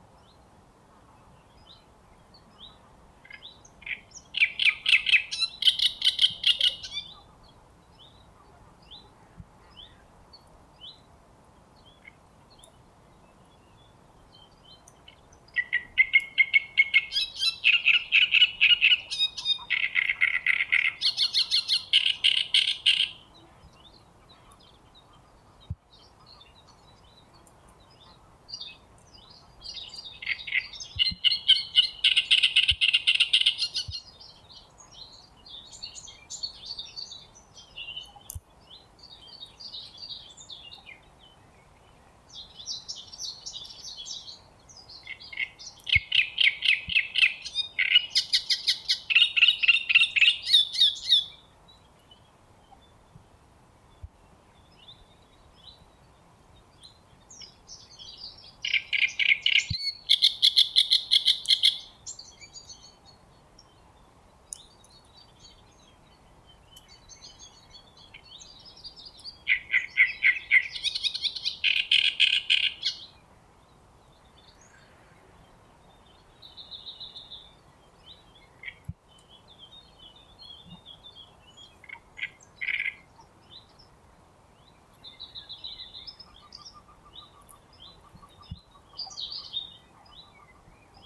Coswig (Anhalt), Deutschland - Kliekener Aue - Vogelstimmen
Die Kliekener Aue ist ein Naturschutzgebiet in der Elbaue nahe Wittenberg - Seen, Feuchtgebiete, Wiesen, Erlenbruchwald. Man hört den Gesang eines Drosselrohrsängers im Schilf, im Hintergrund schnatternde Graugänse im Flug.
Sachsen-Anhalt, Deutschland, 29 April 2022, 15:27